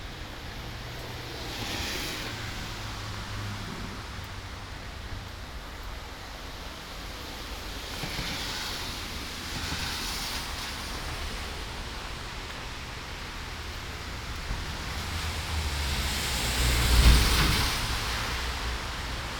Ascolto il tuo cuore, città. I listen to your heart, city. Several chapters **SCROLL DOWN FOR ALL RECORDINGS** - “Posting postcards, day 1 of phase 3, at the time of covid19” Soundwalk
“Posting postcards, day 1 of phase 3, at the time of covid19” Soundwalk
Chapter CVIII of Ascolto il tuo cuore, città. I listen to your heart, city.
Monday, June 15th 2020. Walking to mailbox to post postcard, San Salvario district, Turin, ninety-seven days after (but day forty-three of Phase II and day thirty of Phase IIB and day twenty-four of Phase IIC and day 1st of Phase III) of emergency disposition due to the epidemic of COVID19.
Start at 8:19 p.m. end at 8:40 p.m. duration of recording 20’39”
As binaural recording is suggested headphones listening.
The entire path is associated with a synchronized GPS track recorded in the (kml, gpx, kmz) files downloadable here:
This is the first day and first recording of Phase III of the COVID-19 emergency outbreak.